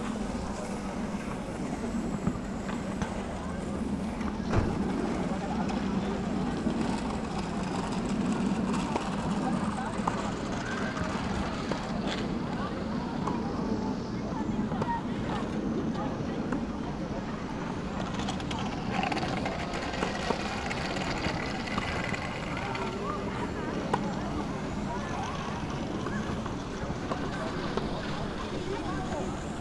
Gleisdreieck, Köln - rail triangle, field ambience
Köln, Gleisdreieck, things heard on the terrace, slightly different perspective.
(Sony PCM D50 internal mics)
20 August 2015, ~20:00